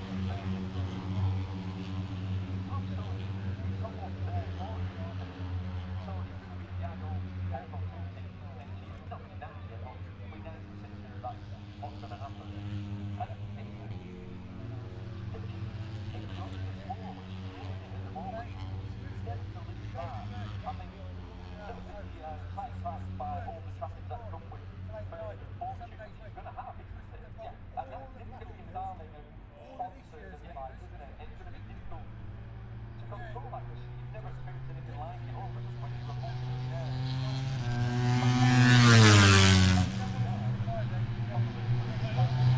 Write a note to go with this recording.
moto three free practice two ... maggotts ... dpa 4060s to Mixpre3 ...